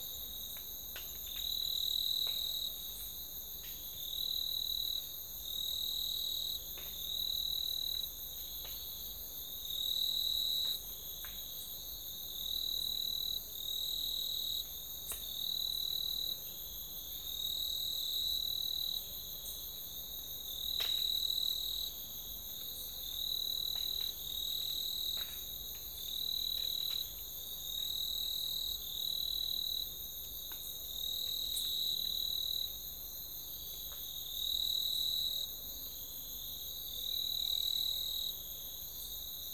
Cape Tribulation, QLD, Australia - night in the dubuji mangroves
taken from a 2 hour recording made in the dubuji mangroves. in the distance you can faintly hear some music from the town as well as drones from the generators.
recorded with an AT BP4025 into an Olympus LS-100.
24 December, 20:30